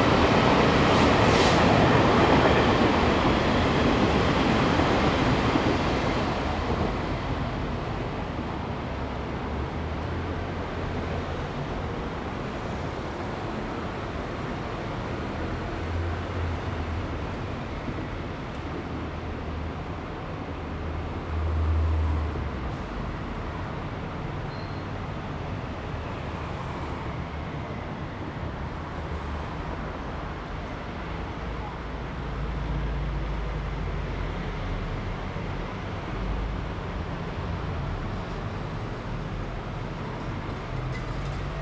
Sec., Dongmen Rd., East Dist., Tainan City - Train traveling through
Next to the railway, Traffic sound, Train traveling through
Zoom H2n MS+XY